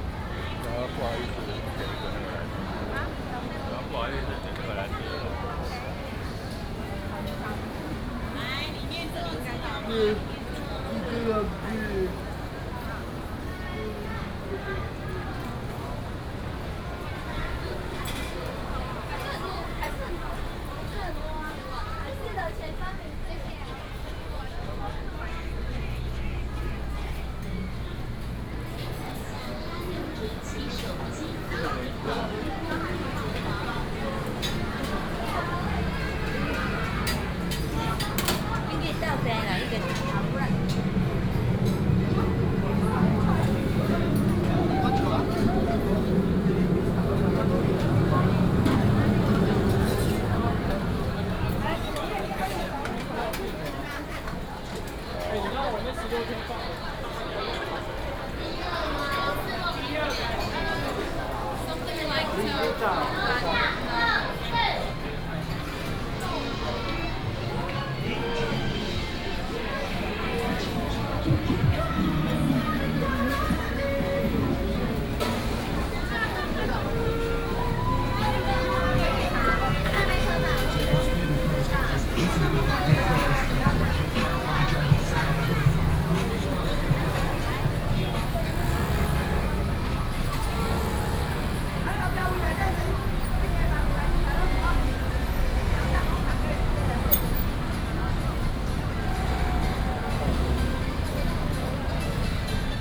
Ai 4th Rd., Ren’ai Dist., Keelung City - Walking through the night market
Various shops sound, walking in the Street, night market